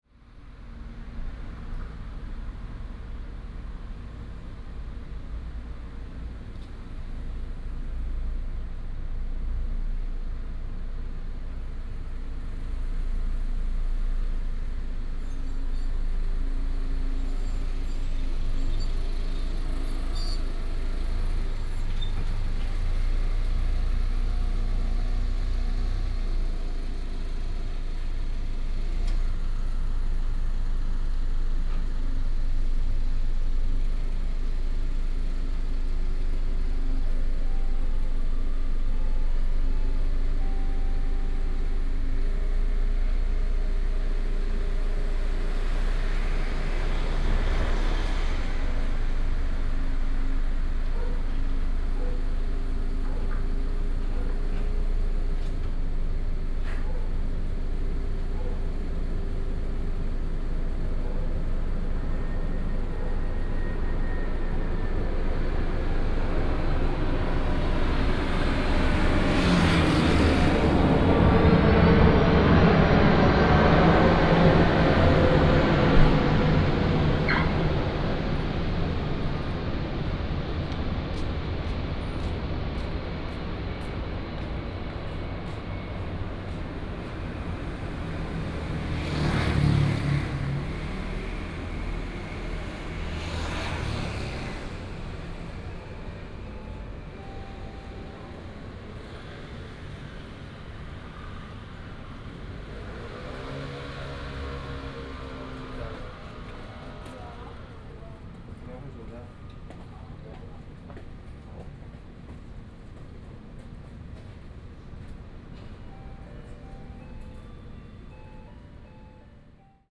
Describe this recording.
At the convenience store entrance, Traffic sound, Late night street, The plane flew through, Binaural recordings, Sony PCM D100+ Soundman OKM II